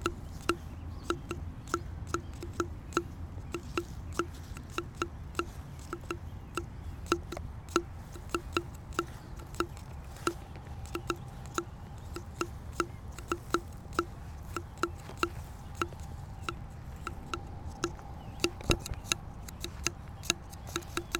Washington Park, South Doctor Martin Luther King Junior Drive, Chicago, IL, USA - Summer Walk 1
Recorded with Zoom H2. Recording of my interactive soundwalk.